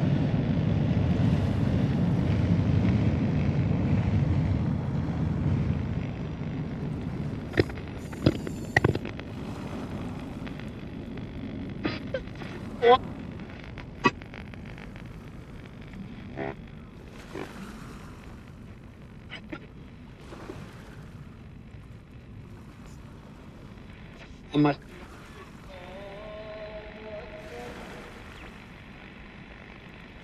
Radios on the Vltava beach
Radios on the Smíchov beach near Železniční most are being re-tuned in realtime according to sounds of Vltava - Moldau. Underwater sonic landscapes and waves of local boats turn potentiometers of radios. Small radio speakers bring to the river valley voices from very far away…
6 April 2011, 13:49